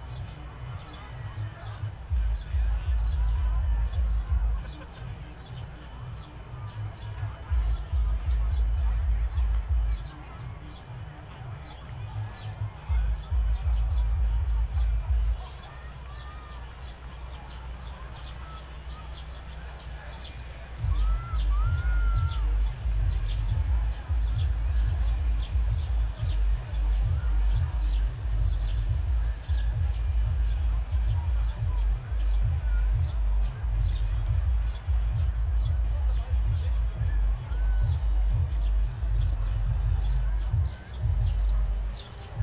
Tempelhofer Feld, Berlin, Deutschland - summer evening, soundsystems
one of my favourite places on Tempelhof revisited on a summer weekend evening. distant hum of thausands of people in the park, deep frequencies of a sound system all over the place.
(SD702, Audio Technica BP4025)